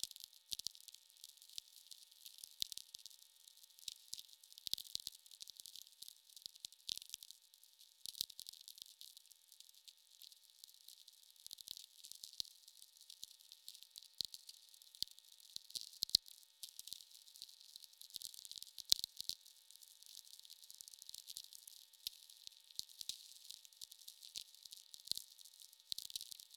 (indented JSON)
{
  "title": "County Rd 510 S, Morgantown, IN, USA - VLF atmos distances, late evening",
  "date": "2020-08-21 21:30:00",
  "description": "atmospheric \"births\" of tweeks, pings, and clicks through ionosphere in the countryside .. distant hums of noise floor reacting.",
  "latitude": "39.36",
  "longitude": "-86.31",
  "altitude": "195",
  "timezone": "America/Indiana/Indianapolis"
}